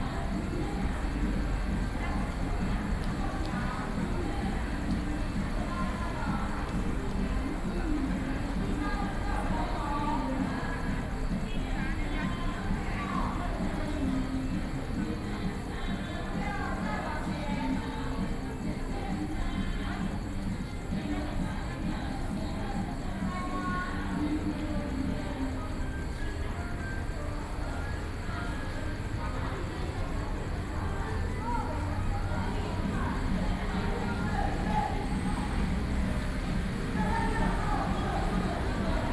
Beitou, Taipei - In the bottom of the track
In the bottom of the track, Environmental Noise, Sony PCM D50 + Soundman OKM II